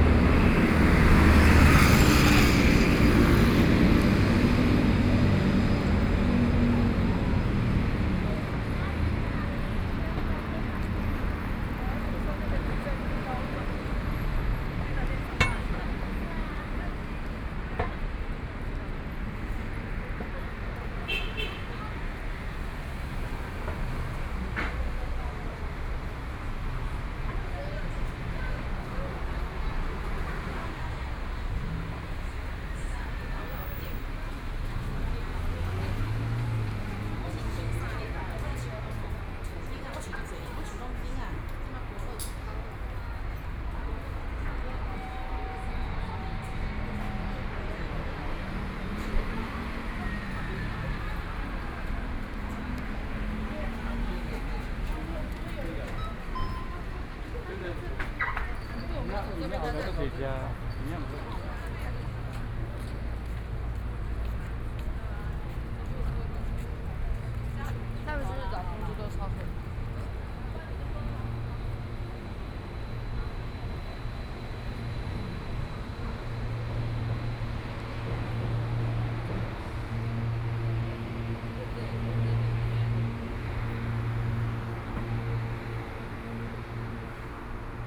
Walking on the road, Traffic Sound
Binaural recordings
15 March 2014, 17:53